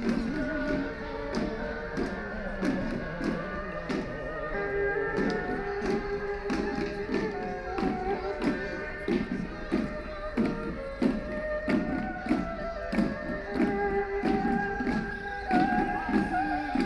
Heping Park, Hongkou District - Erhu and shǒu gǔ
Erhu and shǒu gǔ, Various performances in the park, Binaural recording, Zoom H6+ Soundman OKM II